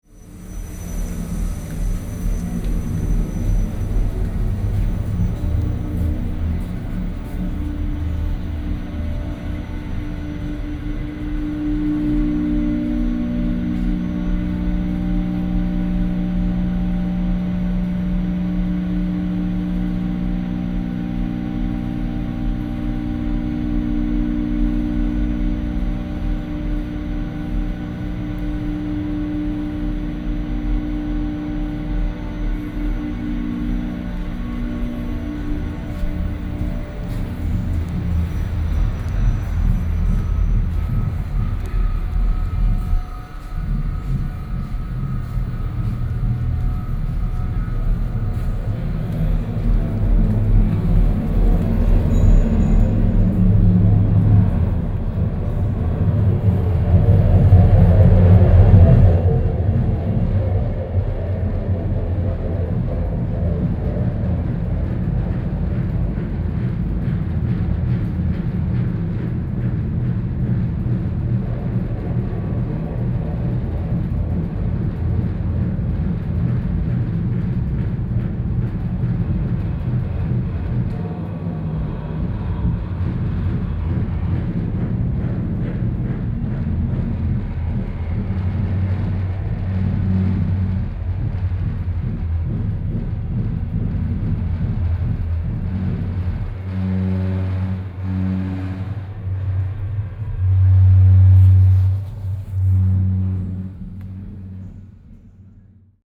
Museum of Contemporary Art, Taipei - in the Museum
Walking in the inside of the Museum, Air conditioning sound
30 September, ~14:00